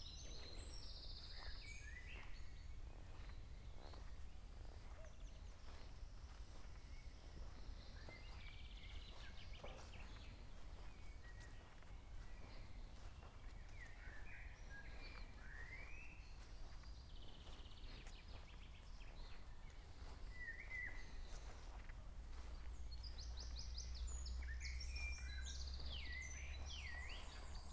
strolling in a park-like garden, horses nearby. finally slowly approaching a garden party.
recorded june 21st, 2008.
project: "hasenbrot - a private sound diary"
Dortmund, Germany